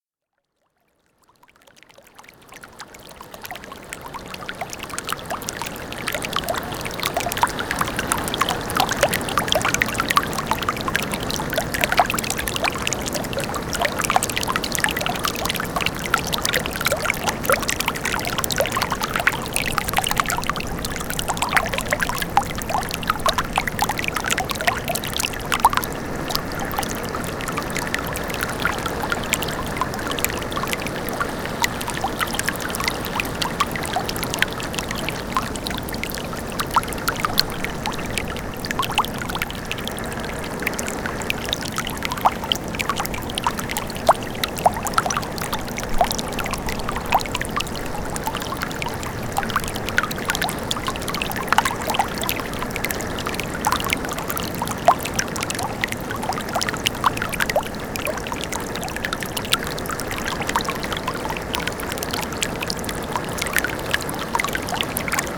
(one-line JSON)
{"title": "Rice University, Main St, Houston, TX, USA - that laughter/broken water main", "date": "2013-10-09 23:58:00", "description": "Water burbling up from a broken water main and flowing out of a crack in pavement. Background sound of a automatic sprinkler aimed into a garden enclosed by heavy cloth sunscreens.\nSony PCM D50", "latitude": "29.72", "longitude": "-95.40", "altitude": "20", "timezone": "America/Chicago"}